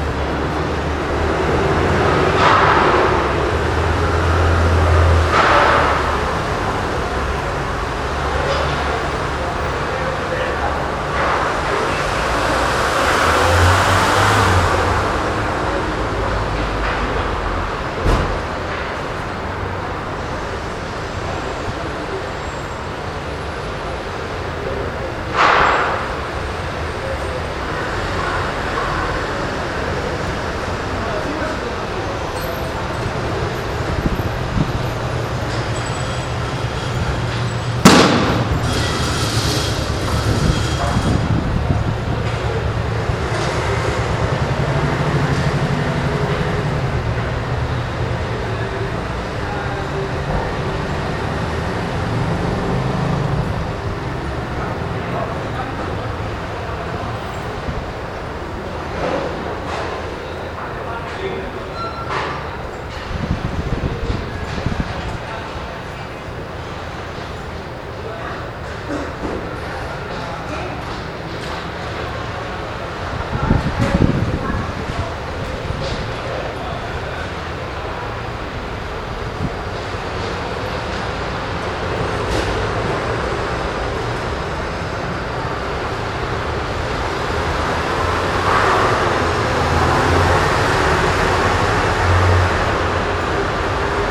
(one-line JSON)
{"title": "El Raval, Barcelone, Espagne - Joaquin Costa", "date": "2014-03-25 20:00:00", "description": "Recording of joaquin costa noises - Sony recorder", "latitude": "41.38", "longitude": "2.16", "altitude": "32", "timezone": "Europe/Madrid"}